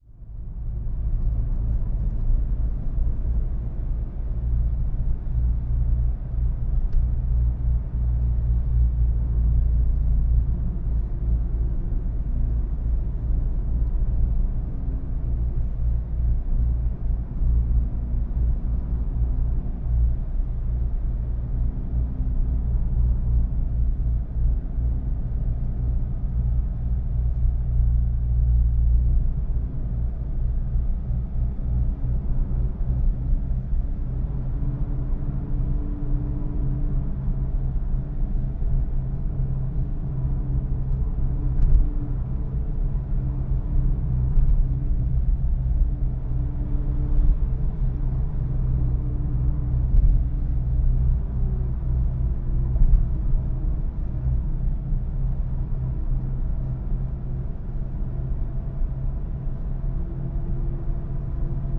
from/behind window, Mladinska, Maribor, Slovenia - streets cleaner
streets cleaner with strong collateral resonance effect